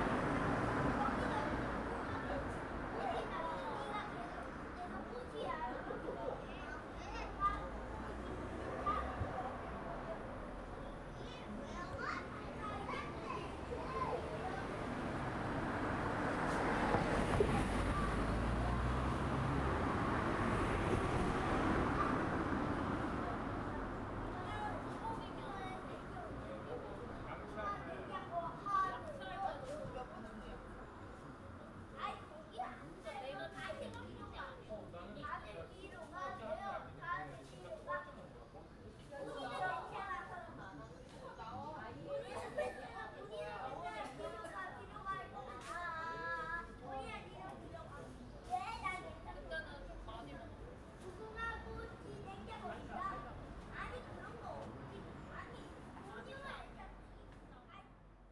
대한민국 서울특별시 서초구 방배2동 - Family Meeting on a Holiday
Bangbae District, Family Meeting on a Holiday, children playing a traditional game